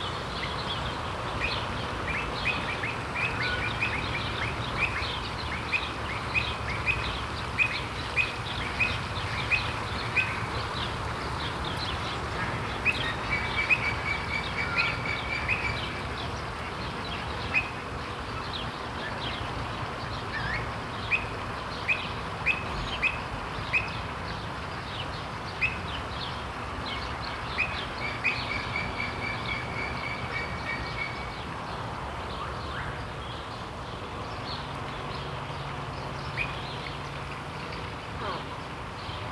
Grugapark, Virchowstr. 167 a, Essen, Deutschland - essen, gruga park, bird free fly areal
Im Gruga Park in der Vogelfreiflug Anlage. Die Klänge der Vogelstimmen.
Inside the Gruga Park in an areal where birds are caged but are enabled to fly around. The sound of the bird voices.
Projekt - Stadtklang//: Hörorte - topographic field recordings and social ambiences